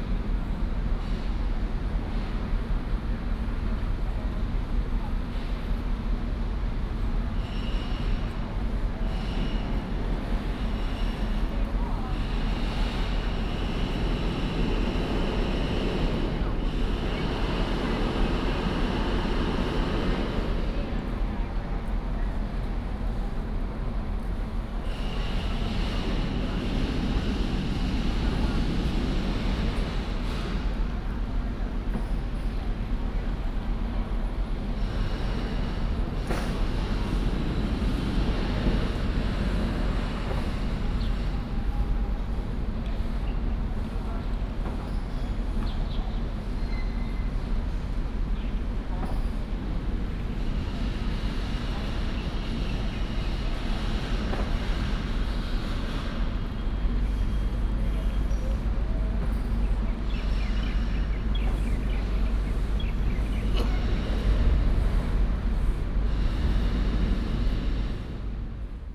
高雄車站, Sanmin Dist., Kaohsiung City - Construction sound
Outside the station, Construction sound, Traffic sound
Sanmin District, Kaohsiung City, Taiwan, 22 November 2016, 15:41